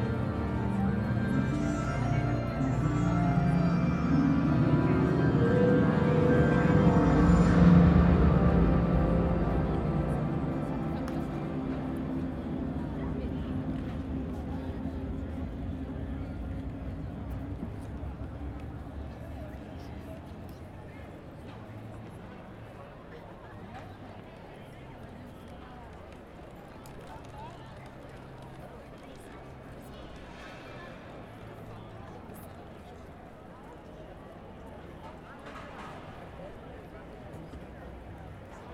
Persone in piazza, musiche provenienti da più direzioni, spazio ampio
Milano, Italy, 21 June